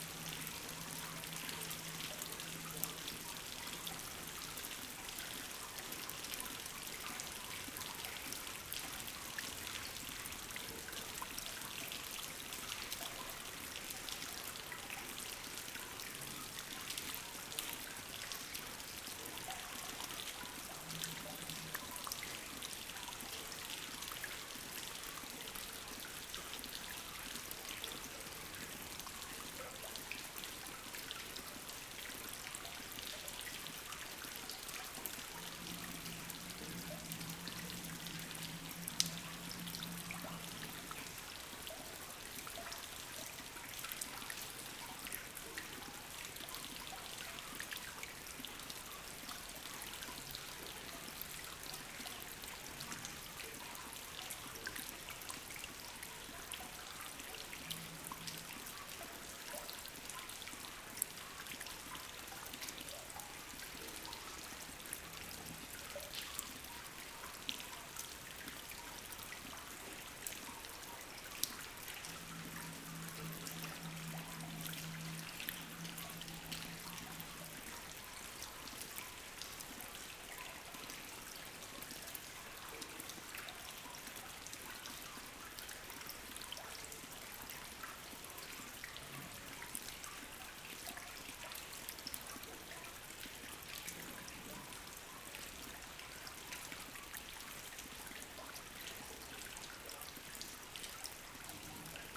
Vix, France - Strong rain during a sad day
Into the small Vix village, rain is falling hardly. During an hiking, we are waiting since two hours this constant and strong rain stops. We are protected in a old providential wash-house.
31 July 2017